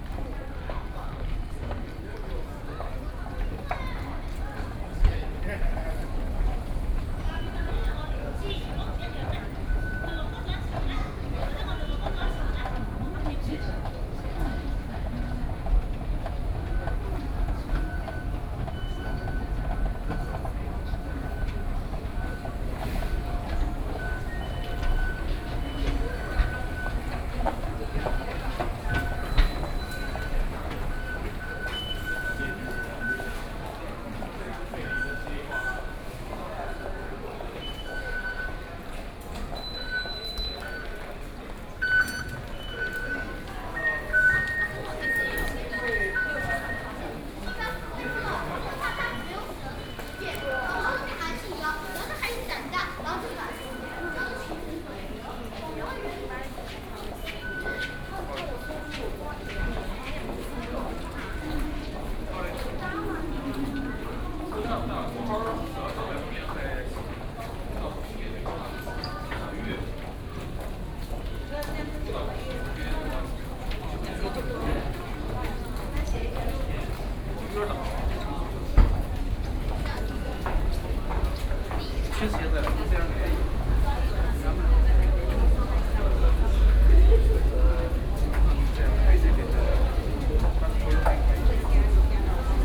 Chiang Kai-Shek Memorial Hall Station - soundwalk
in the MRT stations, From out of the station platform, Sony PCM D50 + Soundman OKM II
Taipei City, Taiwan